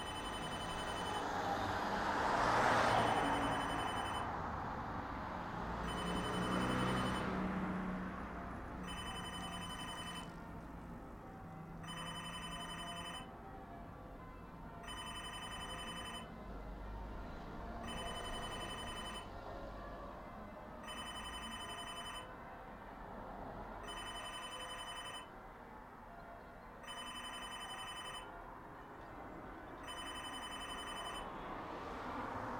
Telephone booth, Reading, UK - The sound of a telephone booth bell
Telephone booths have a sort of relic-like quality about them now that we all have mobile phones, and I found myself wondering how long it's been since this booth was either used to place or receive a call. You can hear the sounds of traffic on the road, the reflections from the bell-ringing practice further down the hill, and the mournful bleat of the unanswered phone as it rings away on its rocker.
18 May